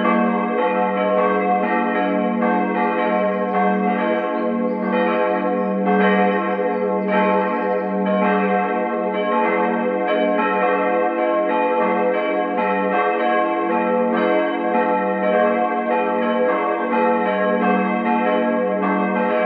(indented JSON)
{
  "title": "Hugenottenstraße, Hameln, Germany CHURCH BELLS - CHURCH BELLS (Evangelisch-reformierte Kirche Hameln-Bad Pyrmont)",
  "date": "2017-06-19 11:13:00",
  "description": "Sound Recordings of Church Bells from Evangelical Reformed Church in Hameln.",
  "latitude": "52.10",
  "longitude": "9.36",
  "altitude": "70",
  "timezone": "Europe/Berlin"
}